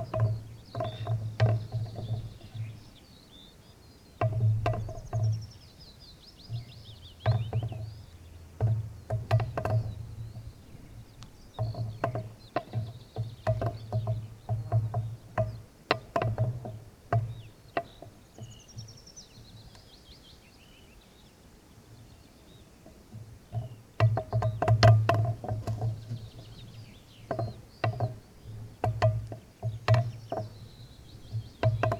Utena, Lithuania, plastic bottle in wind
plastic bottle hooked on a stick to scare off wild hogs
21 June 2012, 16:40